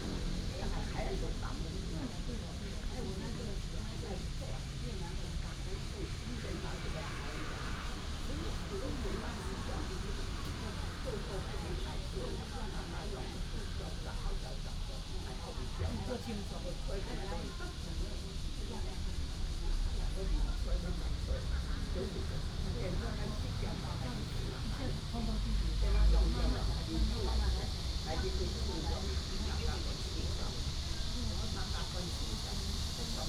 Fudan Park, Pingzhen Dist. - in the park
Cicada cry, birds sound, The elderly, traffic sound